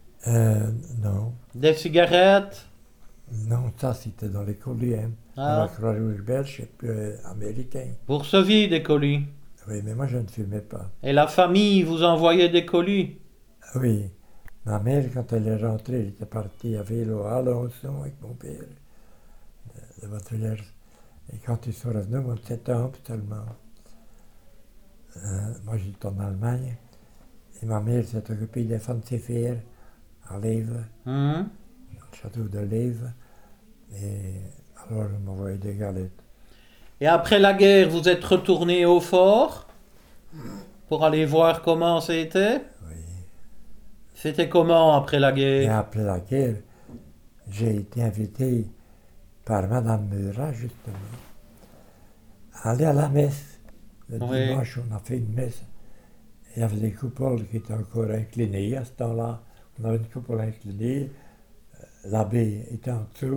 Mettet, Belgique - Robert Cheverier
Robert Cheverier was a fighter in the Saint-Heribert bunker. In may 1940, german fighters won the battle, the belgian fighters were deported in Dresden. Robert Cheverier talks about his life inside the bunker and the deportation. He's 95 years old and deaf, so we have to speak very loudly as to be understood.
Françoise Legros is the owner of the Saint-Héribert bunker in Wepion village. Robert Cheverier is the last alive fighter of the Saint-Héribert underground bunker.